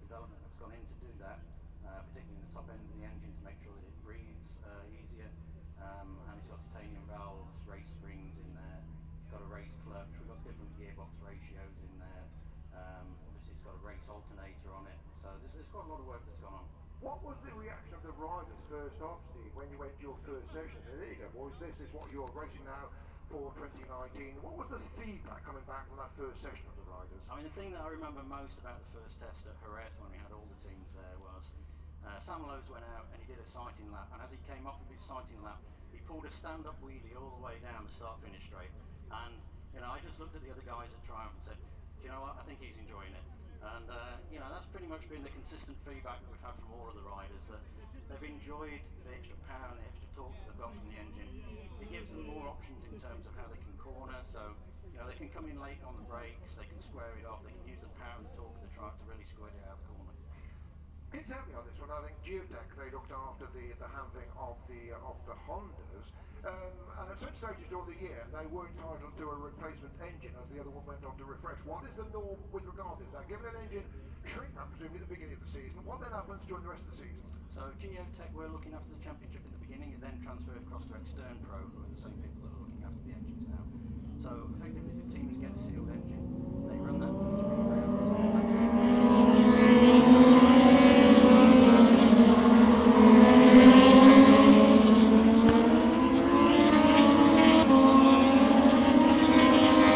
Silverstone Circuit, Towcester, UK - british motor cycle grand prix 2019 ... moto two ... q1 ...
british motor cycle grand prix 2019 ... moto two qualifying one ... and commentary ... copse corner ... lavalier mics clipped to sandwich box ...
England, UK, August 2019